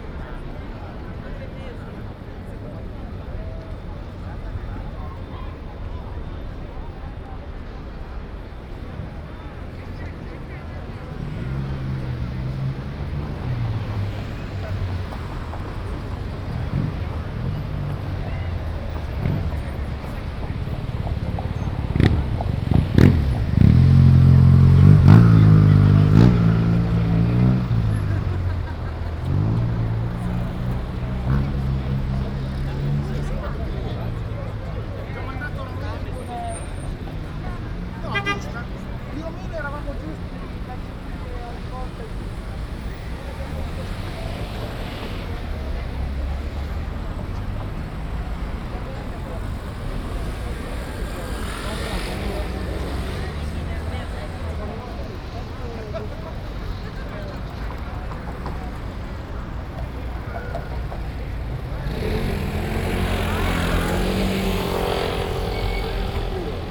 "Monday at Piazza Vittorio with Frecce Tricolori passage in the time of COVID19" soundscape
Chapter LXXXVII of Ascolto il tuo cuore, città. I listen to your heart, city
Monday, May 25th 2020. Piazza Vittorio Veneto, Turin, with Frecce Tricolori aerobatic aerial patrol seventy-six days after (but day twenty-two of Phase II and day nine of Phase IIB and day three of Phase IIC) of emergency disposition due to the epidemic of COVID19.
Start at 2:58 p.m. end at 3:28 p.m. duration of recording 30’’00”
Coordinates: lat. 45.06405, lon. 7.69656
25 May, ~15:00